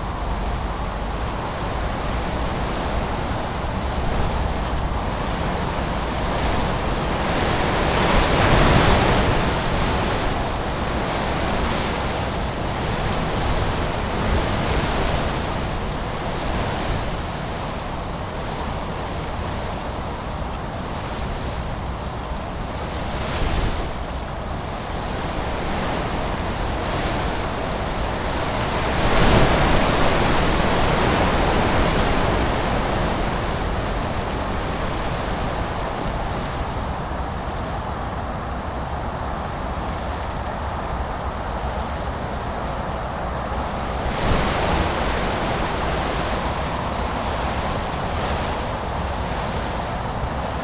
Wavre, Belgique - Wind !!
A terrible terrible wind, blowing into the arbours.